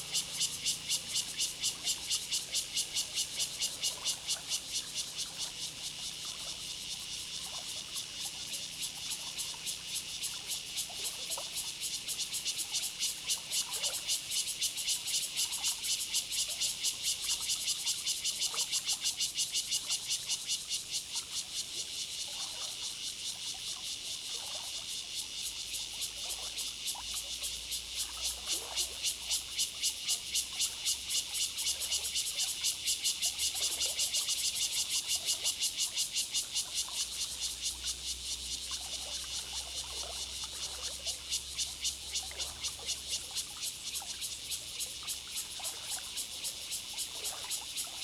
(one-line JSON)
{"title": "里瓏里, Guanshan Township - Cicadas and streams", "date": "2014-09-07 11:55:00", "description": "Cicadas sound, Traffic Sound, The sound of water, Streams waterway, Very hot weather\nZoom H2n MS+ XY", "latitude": "23.04", "longitude": "121.15", "altitude": "255", "timezone": "Asia/Taipei"}